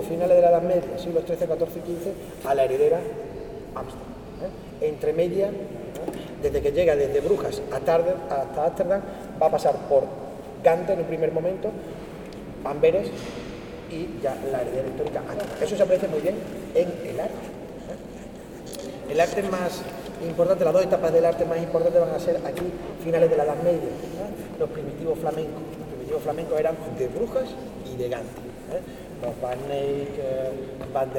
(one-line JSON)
{"title": "Leuven, Belgique - Leuven church", "date": "2018-10-13 11:45:00", "description": "Tourist guidance in spanish, inside the Leuven church.", "latitude": "50.88", "longitude": "4.70", "altitude": "39", "timezone": "Europe/Brussels"}